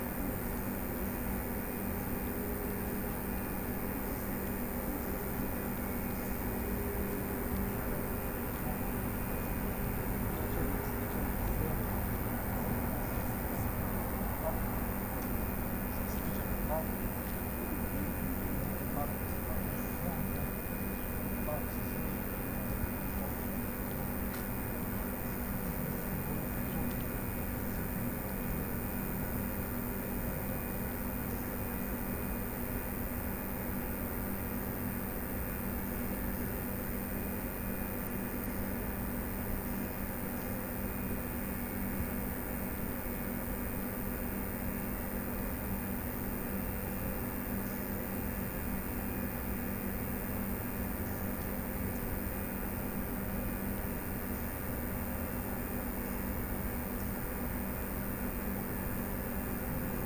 Mestni park, Maribor, Slovenia - corners for one minute
one minute for this corner: Mestni park, water pump
25 August 2012, 21:14